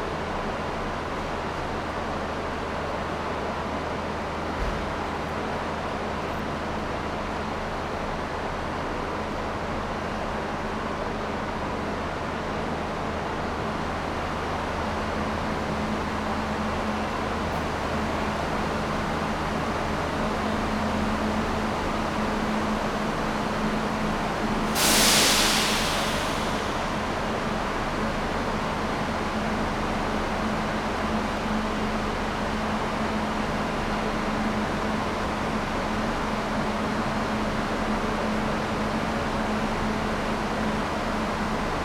Porto, São Bento Train Station, main hall - diffusion
moving from the main hall towards the platforms. the place is packed with locals and tourists. all sounds heavily reverberated over high ceilings. diffused tails. going among the trains.